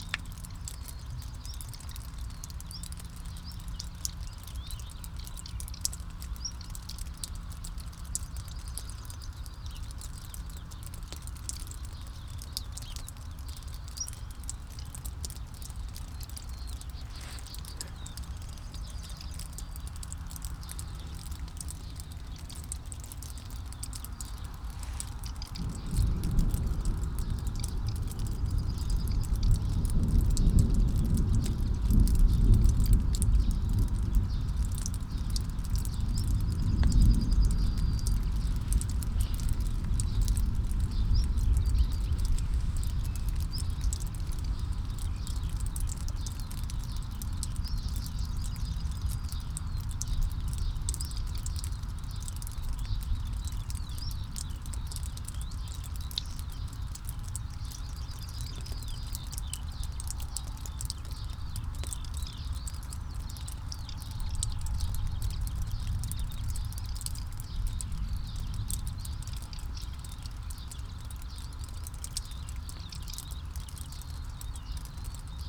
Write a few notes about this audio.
The recording was made immediately after the ligtning storm using a custom pair of binaural microphones.